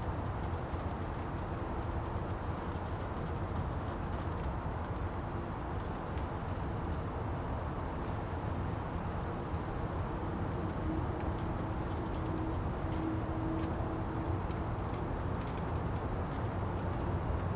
In the bamboo forest, Traffic Sound
Zoom H4n +Rode NT4